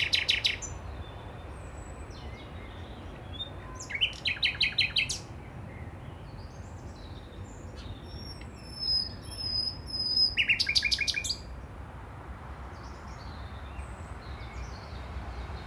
The Nightingale season has started again in Berlin. This one is singing beside the rail tracks. It is hardly mentioned but a significant (to me) change is happening to the city's soundscape. The S-Bahn is introducing new rolling stock and the musical glissandos of the old S-Bahn trains - one of my favourite Berlin sounds - are rapidly disappearing. The new sounds (heard here) are far less appealing. Really a pity.